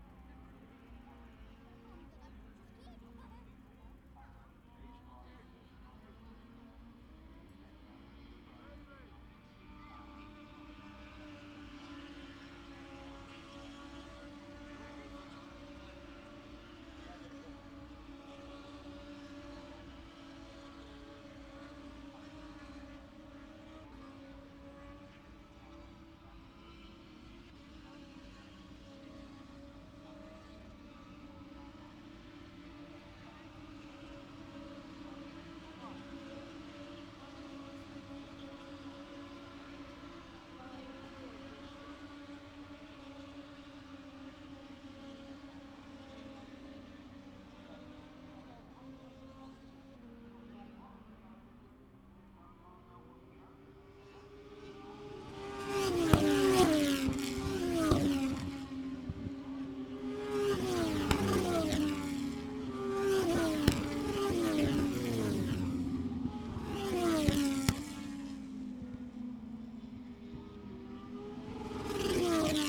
british motorcycle grand prix 2019 ... moto two ... free practice two ... maggotts ... lavalier mics clipped to bag ... bikes often hitting their rev limiter ...
23 August 2019, 15:10